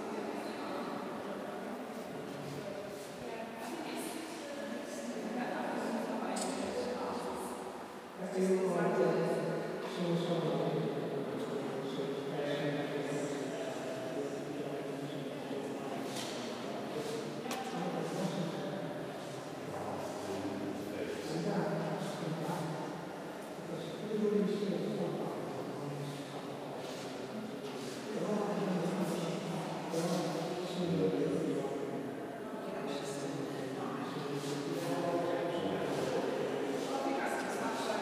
Hepworth Wakefield, Wakefield, West Yorkshire, UK - Hepworth reverberations 1
A blurry conversation in the extremely reverberant Hepworth Wakefield museum.
(zoom H4n)
6 February 2015